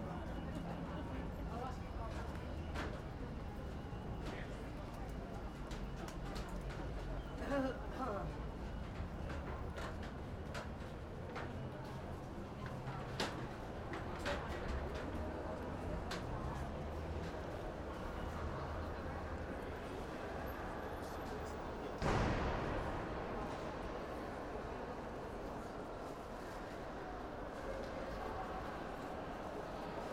Helsinki, Finland - Central Rail Station - VR-